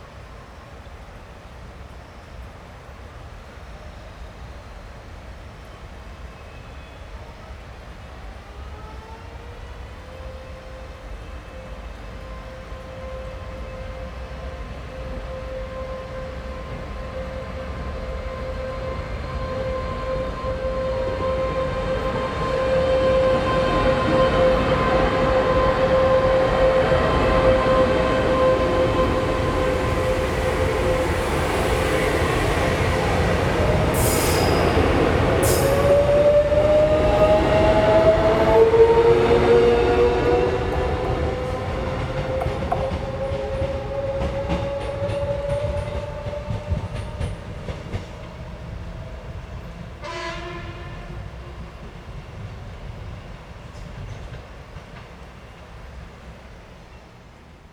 三貂嶺, 瑞芳區, New Taipei City - Train traveling through
Next to the tracks, Train traveling through, Zoom H4n+ Rode NT4
Ruifang District, New Taipei City, Taiwan, 21 November 2011, ~16:00